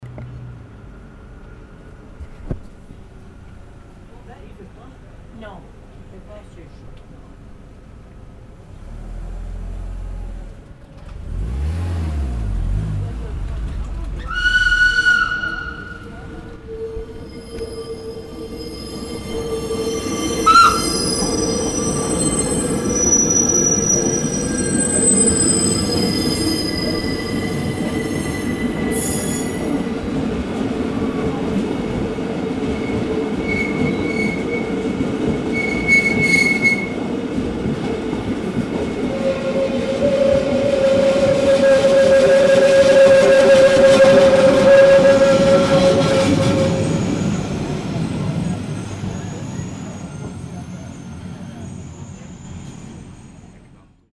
der Bernina fährt fast durch die Osteria
Poschiavo, Switzerland, 19 July 2011, 15:25